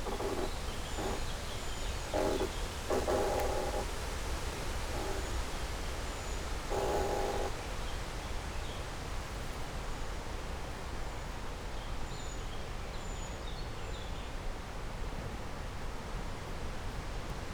Fen Covert, UK - Ancient wetland wood in a gale; inside and outside a creaky tree
A snippet from several days of gales. Fen covert is a very atmospheric old wetland wood, left untouched for decades. The birches and alders have fallen, slanted, grown and died into fantastic mossy shapes and sculptures. A dead tree, cracked but still upright leans on another. They move together in the wind. The creak is faintly audible to the ear amongst the hiss and swell of leaves and branches, but very loud and close to the contact mic placed in the trunk. This track is a mix of the outer and inner sounds in sync.